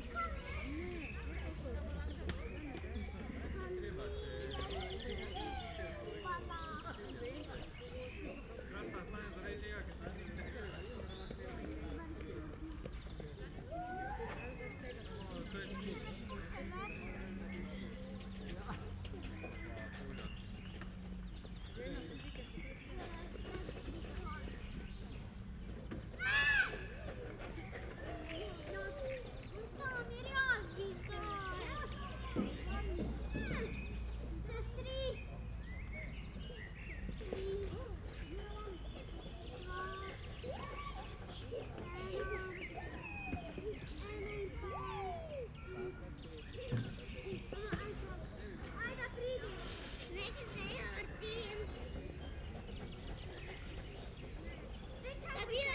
Maribor, Mestni park - playground
whitsunday ambience in Mestni park
(tech: SD702, AT BP4025)